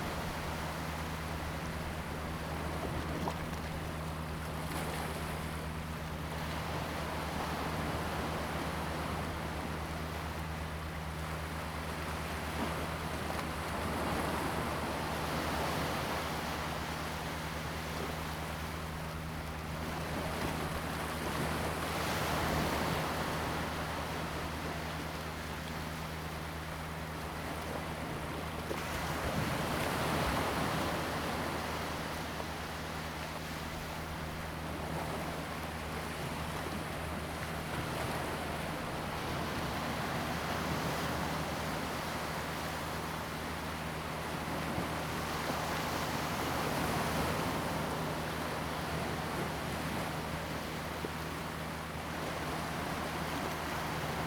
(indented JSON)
{
  "title": "龍門村, Huxi Township - Wave",
  "date": "2014-10-21 11:24:00",
  "description": "At the beach, sound of the Waves, There are boats on the sea\nZoom H2n MS+XY",
  "latitude": "23.55",
  "longitude": "119.69",
  "altitude": "8",
  "timezone": "Asia/Taipei"
}